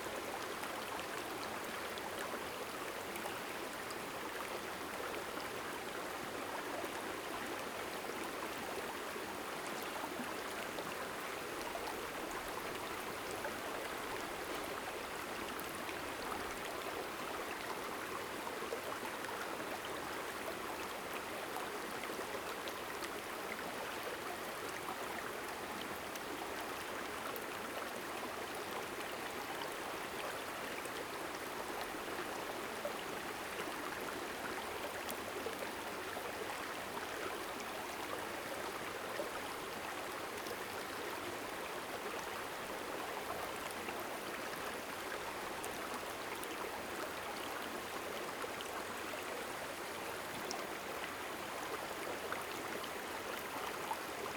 中路坑溪, 桃米里, Taiwan - Stream
small Stream
Zoom H2n MS+XY